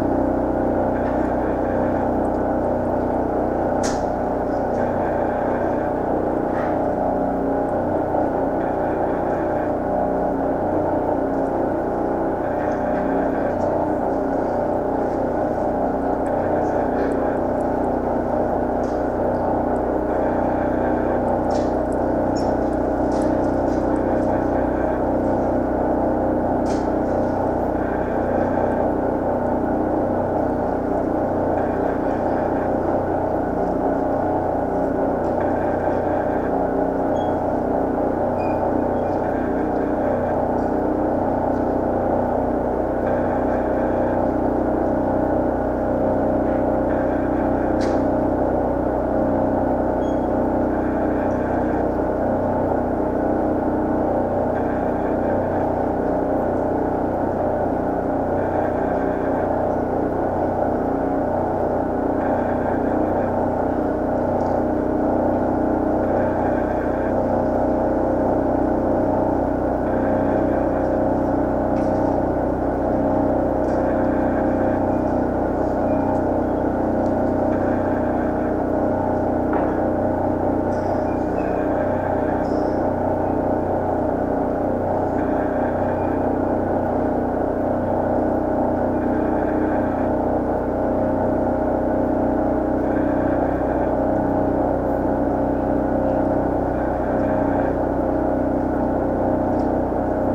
{
  "title": "Tallinn, Baltijaam market wall ventilation - Tallinn, Baltijaam market wall ventilation (recorded w/ kessu karu)",
  "date": "2011-04-20 17:00:00",
  "description": "hidden sounds, contact mic recording of a metal wall along the market at Tallinns main train station.",
  "latitude": "59.44",
  "longitude": "24.74",
  "timezone": "Europe/Tallinn"
}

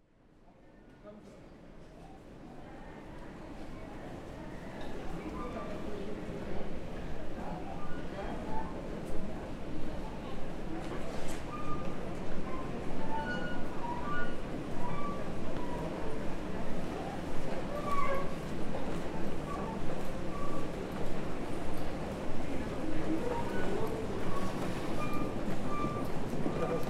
{
  "title": "Hugo-Eckener-Ring, Frankfurt am Main, Deutschland - Escelator noises Corona Anouncements",
  "date": "2020-09-08 16:43:00",
  "description": "The airport is rather busy, especially the passage between train station and airport. An escelator could need some oil, but then the beautiful noises will vanish. There are a lot of anouncements asking the passengers to stick to the Covid-19-regulations.",
  "latitude": "50.05",
  "longitude": "8.57",
  "altitude": "116",
  "timezone": "Europe/Berlin"
}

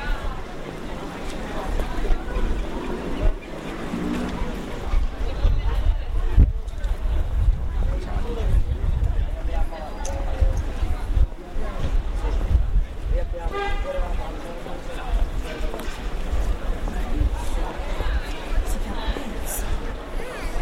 {
  "title": "walking in Naples (Italy): from Carità square to Gesù square - April 2006",
  "latitude": "40.84",
  "longitude": "14.25",
  "altitude": "42",
  "timezone": "GMT+1"
}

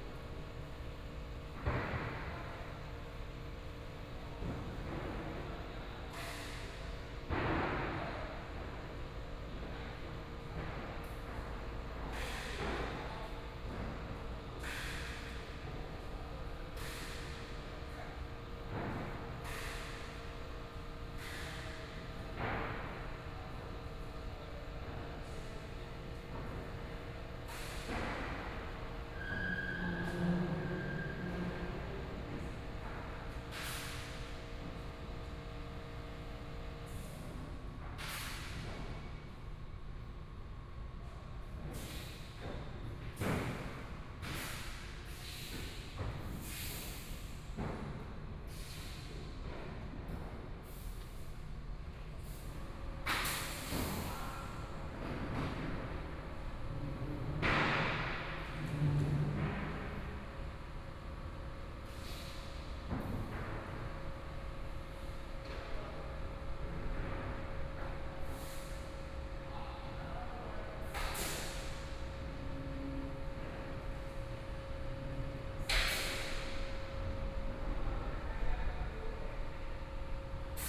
{
  "title": "Taipei EXPO Park - Carpenter",
  "date": "2013-10-09 13:35:00",
  "description": "Carpenter under construction, Aircraft flying through, Sony PCM D50+ Soundman OKM II",
  "latitude": "25.07",
  "longitude": "121.52",
  "altitude": "8",
  "timezone": "Asia/Taipei"
}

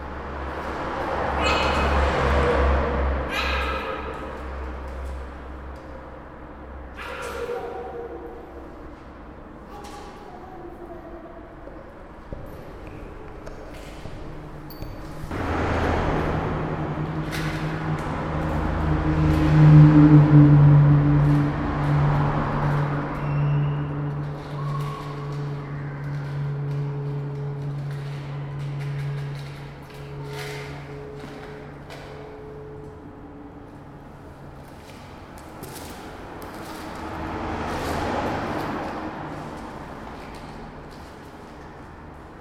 {
  "title": "Holeckova street, the corridor",
  "date": "2011-02-07 15:34:00",
  "description": "on the entrance of the corridor from the part towards the steet trafic. Favourite sounds of Prague",
  "latitude": "50.08",
  "longitude": "14.40",
  "altitude": "224",
  "timezone": "Europe/Prague"
}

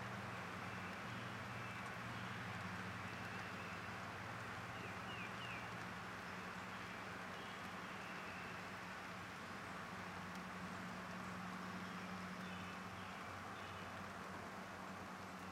{
  "title": "Rothbury Rest Area, Grant Township, MI, USA - Spring Rain at the Rest Stop",
  "date": "2016-04-30 19:11:00",
  "description": "Turning off the windshield wipers, then light rain, birds and northbound traffic on US-31. Stereo mic (Audio-Technica, AT-822), recorded via Sony MD (MZ-NF810, pre-amp) and Tascam DR-60DmkII.",
  "latitude": "43.48",
  "longitude": "-86.36",
  "altitude": "215",
  "timezone": "America/Detroit"
}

